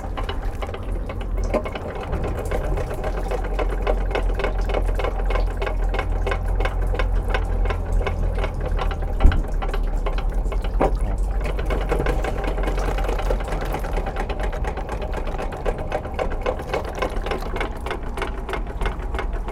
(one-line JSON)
{"title": "Caudebec-en-Caux, France - Fountain", "date": "2016-07-23 11:10:00", "description": "Catching water to a Bayard fountain, because we don't have any liter of water, bottles are empy !", "latitude": "49.52", "longitude": "0.73", "altitude": "7", "timezone": "Europe/Paris"}